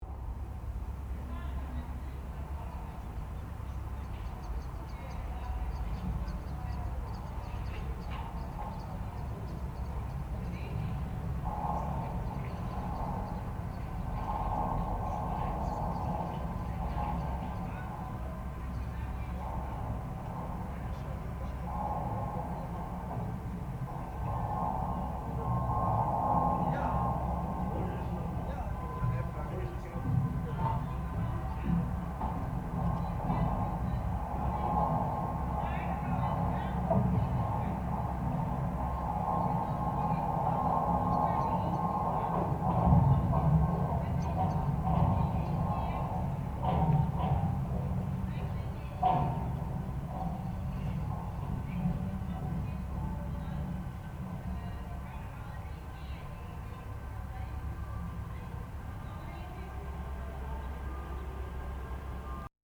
Kanaleneiland, Utrecht, The Netherlands - voetgangersbrug
contact mics on metal bridge + stereo mic